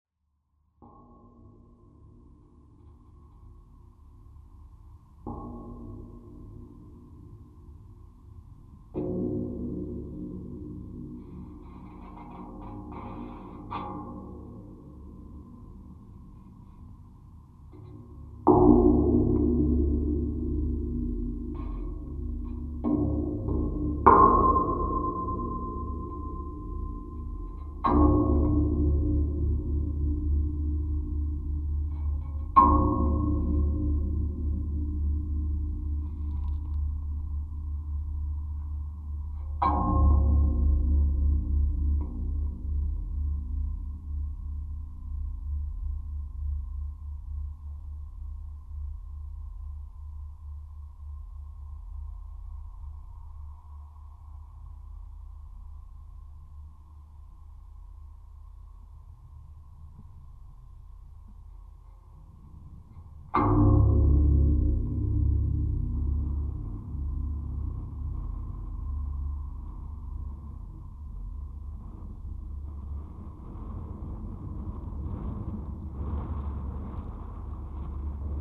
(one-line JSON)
{"title": "Mont-Saint-Guibert, Belgique - Cables", "date": "2016-07-10 16:40:00", "description": "Playing with cables on a strange architecture. Recorded with a contact microphone, stereo, placed onto two metallic cables.", "latitude": "50.66", "longitude": "4.62", "altitude": "146", "timezone": "Europe/Brussels"}